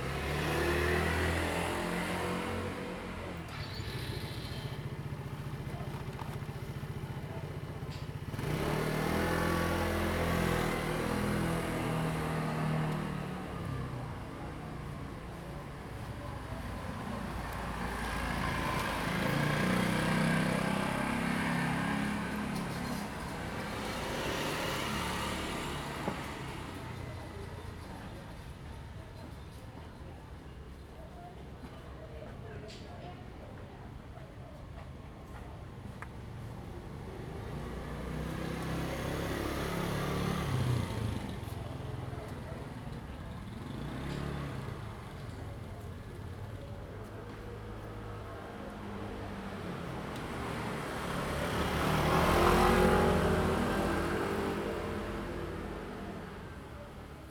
碧雲寺, Hsiao Liouciou Island - In the square
In the square in front of the temple, Traffic Sound
Zoom H2n MS+XY
1 November 2014, 14:47